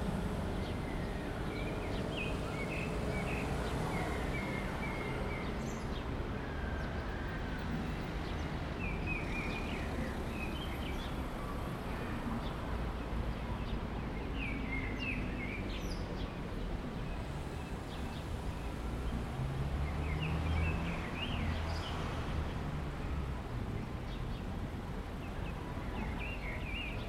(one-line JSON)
{"title": "Downtown, Le Havre, France - Place de l'hötel de ville le havre", "date": "2014-03-02 18:00:00", "latitude": "49.49", "longitude": "0.11", "altitude": "9", "timezone": "Europe/Paris"}